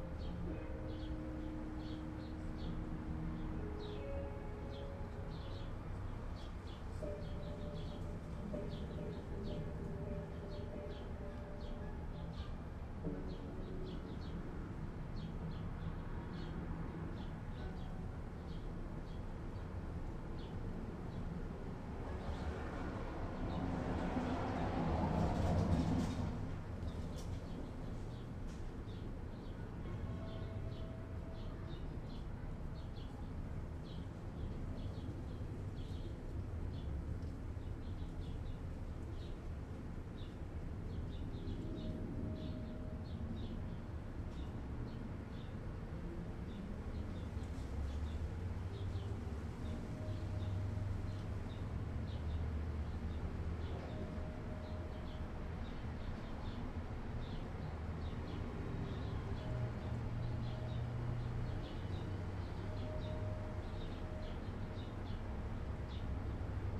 Northwest Berkeley, Berkeley, CA, USA - WLD 2012
delayed contribution to the World Listening Day 2012 - street noise, radio, birds, too much coffee guitar, train - recorded on Wednesday 07/18/2012